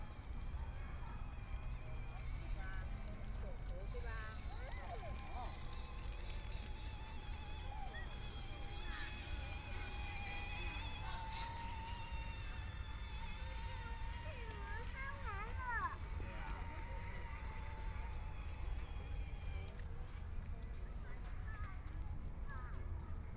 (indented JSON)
{
  "title": "Yangpu Park, Yangpu District - soundwalk",
  "date": "2013-11-26 11:54:00",
  "description": "Walking through the park, From the plaza area to play area, Binaural recording, Zoom H6+ Soundman OKM II",
  "latitude": "31.28",
  "longitude": "121.53",
  "altitude": "1",
  "timezone": "Asia/Shanghai"
}